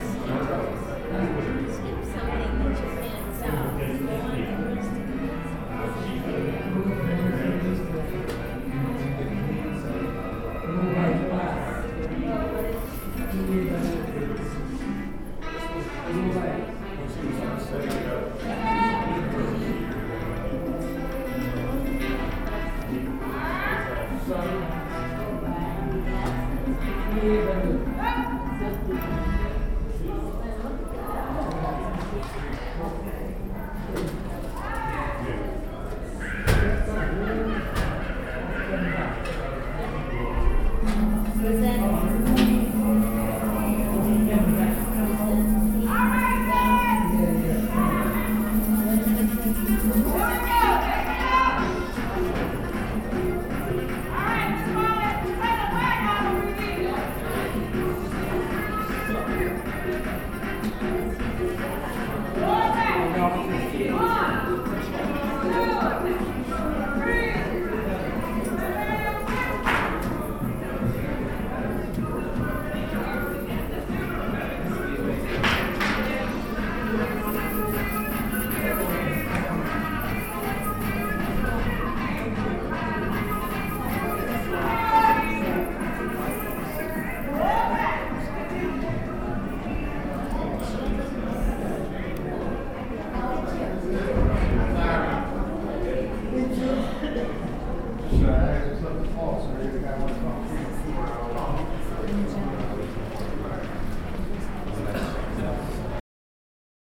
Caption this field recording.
eavesdropping from the renaissance court on a senior citizen belly dancing class